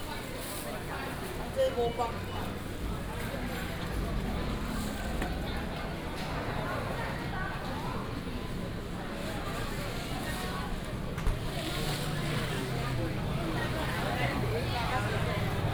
{"title": "大林鎮市場, Dalin Township - Walking in the traditional market", "date": "2018-02-15 20:24:00", "description": "Walking in the traditional market, lunar New Year, traffic sound, vendors peddling\nBinaural recordings, Sony PCM D100+ Soundman OKM II", "latitude": "23.60", "longitude": "120.45", "altitude": "37", "timezone": "Asia/Taipei"}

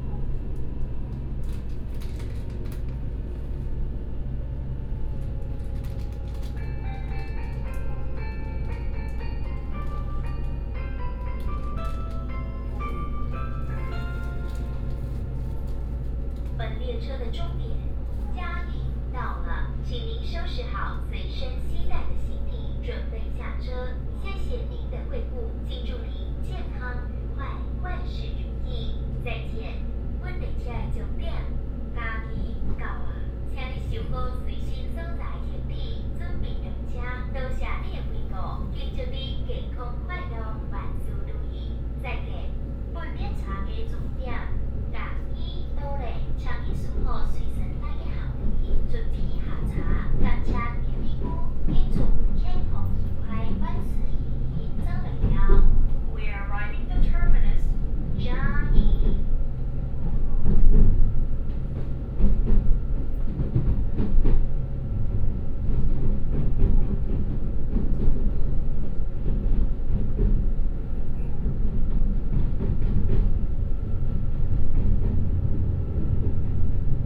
{"title": "Chiayi, Taiwan - Train compartment", "date": "2016-05-12 15:16:00", "description": "Train compartment, Broadcast Message, from Jiabei Station to Chiayi Station", "latitude": "23.49", "longitude": "120.45", "altitude": "35", "timezone": "Asia/Taipei"}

